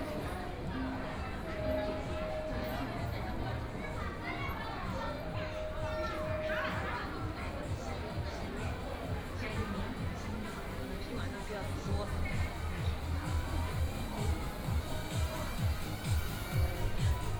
Yuyuan Garden, Shanghai - Yuyuan Garden
walking around the Yuyuan Garden, The famous tourist attractions, Very large number of tourists, Binaural recording, Zoom H6+ Soundman OKM II